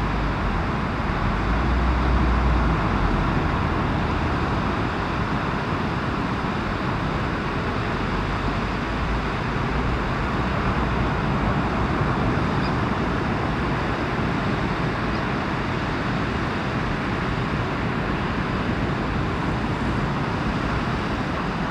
filtering ocean noise, Co. Clare, Ireland
adjusting my recording position in relation to a curved concrete sea barrier
County Clare, Munster, Republic of Ireland